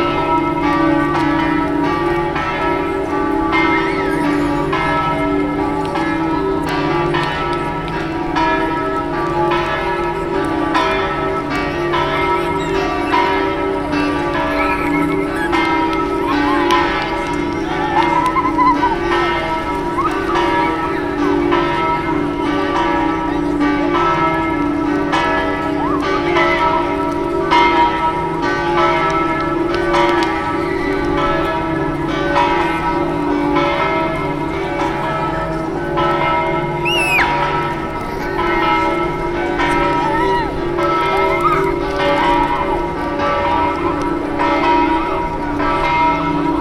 Venise, Italie - bells of san marco place
Piazza San Marco, Venice, Italy, 10 August 2011